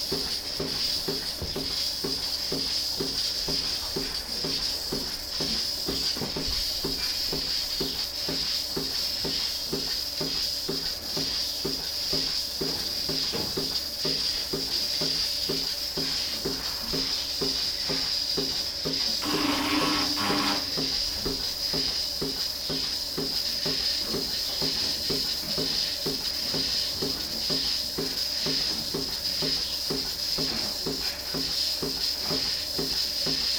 Halenfeld, Buchet, Deutschland - Eine Kuh wird automatisch gemolken /
Eine Kuh steht im Melkroboter und wird um 11 Liter Milch erleichtert.
One cow in the milking robot be pumped 11 liters of milk.
Buchet, Germany, Germany